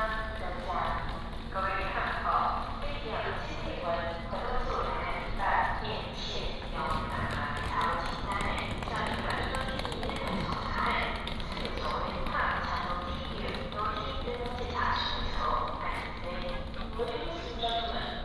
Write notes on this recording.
Station Message Broadcast, Walking at the station, From the station hall, To the station platform, Escalator